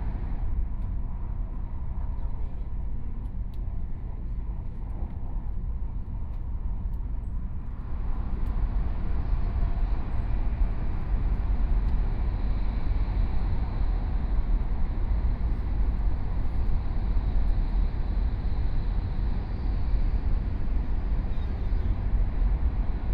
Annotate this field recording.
from Banqiao Station to Taoyuan Station, Binaural recordings, Zoom H4n+ Soundman OKM II